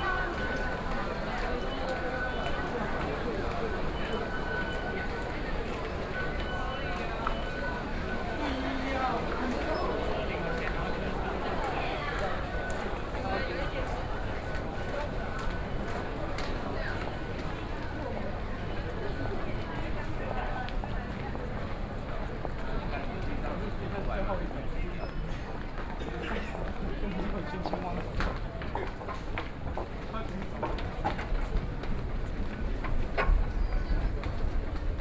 Taipei Main Station, Taiwan - soundwalk
Convert other routes at the station, Sony PCM D50 + Soundman OKM II